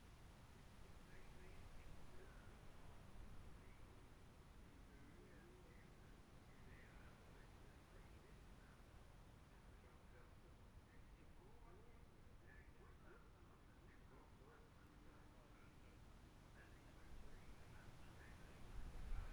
Jacksons Ln, Scarborough, UK - Gold Cup 2020 ...
Gold Cup 2020 ... Twins and 2 & 4 strokes practices ... Memorial Out ... Olympus LS14 integral mics ...
11 September